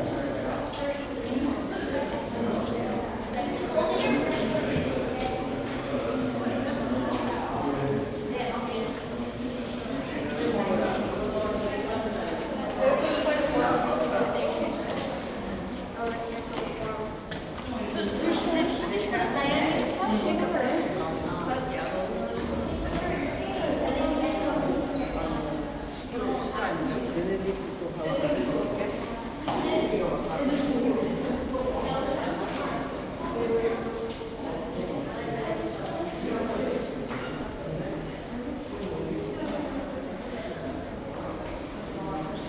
Westminster Abbey Cloisters - 2017-06-22 Westminster Abbey Cloisters 2
This was recorded in the corridor leading to The College Garden. A liitle more noise due to it being an enclosed stone corridor. Zoom H2n.